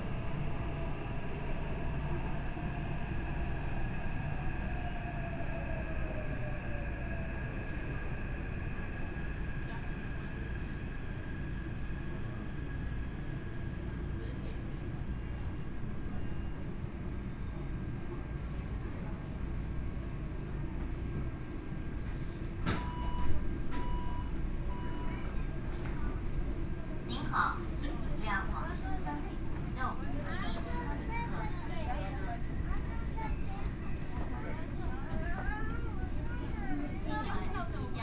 Taipei, Taiwan - Orange Line (Taipei Metro)
from Guting Station to Songjiang Nanjing station, Binaural recordings, Zoom H4n+ Soundman OKM II